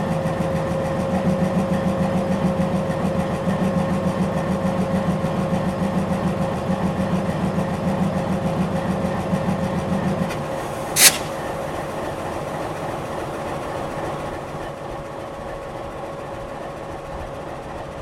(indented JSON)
{
  "title": "Gare de Tunis, Rue Ali Darghouth, Tunis, Tunisie - Tunis Gare Barcelone",
  "date": "2017-06-17 15:00:00",
  "description": "Tunis\nGare Barcelone\nAmbiance",
  "latitude": "36.80",
  "longitude": "10.18",
  "altitude": "8",
  "timezone": "Africa/Tunis"
}